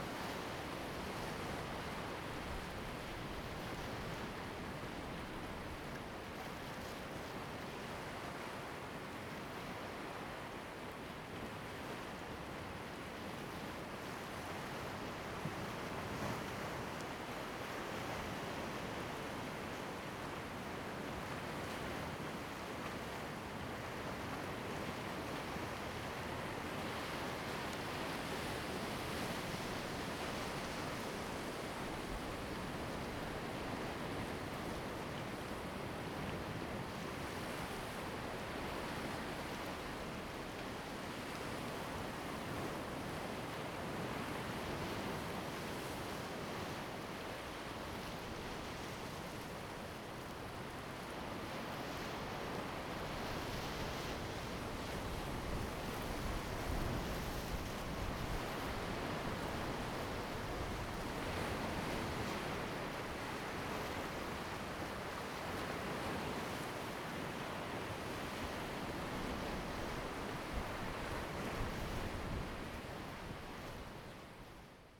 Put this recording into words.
In the beach, Sound of the waves, Zoom H2n MS +XY